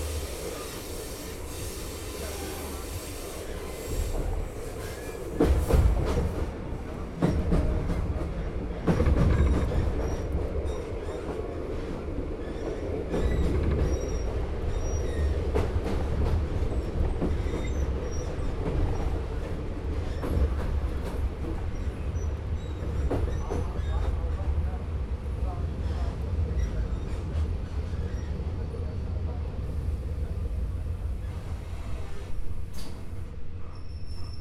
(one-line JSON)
{"title": "Rail tracks, Katowice, Poland - (823) Old train on clickety-clack tracks", "date": "2021-06-27 14:52:00", "description": "Recording of a train ride made from the inside with the recorded placed directly on the train floor.\nRecorded with UNI mics of Tascam DR100mk3", "latitude": "50.26", "longitude": "19.07", "altitude": "259", "timezone": "Europe/Warsaw"}